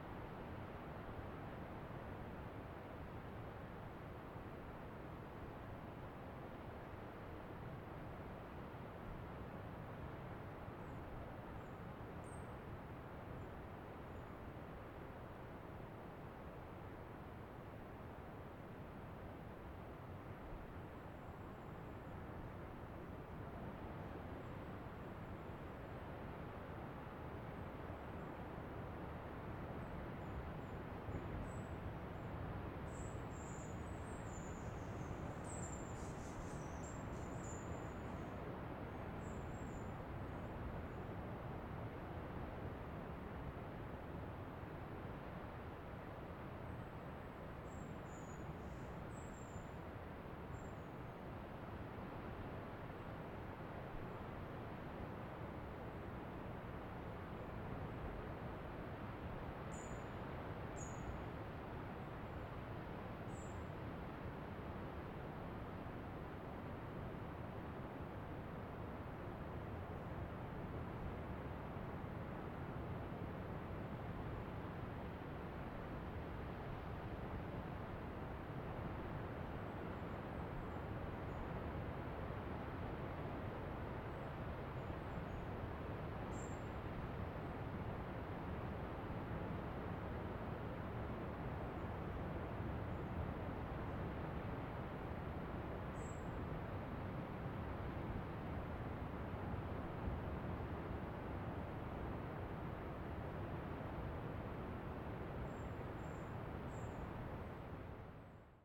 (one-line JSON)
{"title": "Valdivia, Chili - LCQA AMB PUNTA CURIÑANCO EL OLIVILLO MORNING BIRDS OCEAN BREEZE MS MKH MATRICED", "date": "2022-08-24 11:00:00", "description": "This is a recording of a forest 'El Olivillo' in the Área costera protegida Punta Curiñanco. I used Sennheiser MS microphones (MKH8050 MKH30) and a Sound Devices 633.", "latitude": "-39.71", "longitude": "-73.40", "altitude": "112", "timezone": "America/Santiago"}